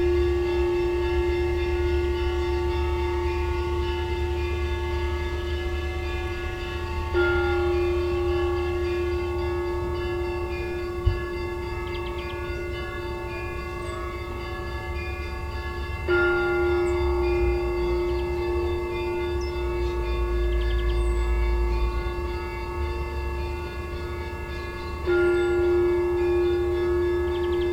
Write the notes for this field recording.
We traveled go for a vacation Abkhazia. They took a part of a cozy home. Next to us was a monastery. His sounds are always please us. Recored with a Zoom H2.